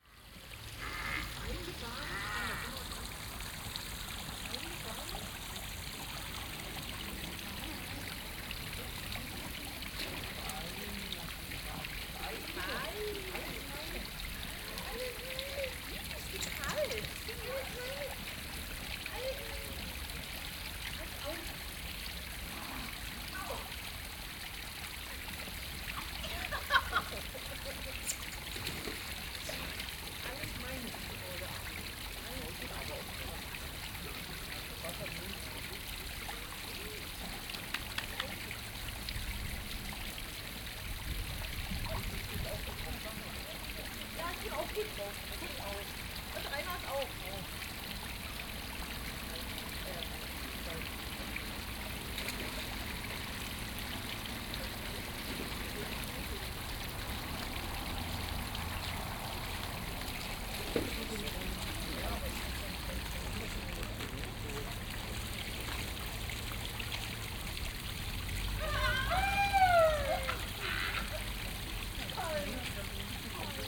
Leuschnerdamm, Engelbecken - indischer Brunnen / indian fountain
indischer Brunnen im Engelbecken, ehemals Luisenstädtischer Kanal.
Der Luisenstädtische Kanal ist ein historischer innerstädtischer Kanal in der Berliner Luisenstadt, der die Spree mit dem Landwehrkanal verband. Er wurde 1852 eröffnet und verlief durch die heutigen Ortsteile Kreuzberg und Mitte. 1926 wurde der Kanal teilweise zugeschüttet und in eine Gartenanlage umgestaltet. Mit dem Mauerbau im Jahr 1961 verlief bis 1989 entlang des nördlichen Teils des Kanals die Grenze zwischen Ost- und West-Berlin. Seit 1991 wird die seit dem Zweiten Weltkrieg zerstörte Gartenanlage abschnittsweise rekonstruiert.
indian fountain at Engelbecken, former Louisenstadt canal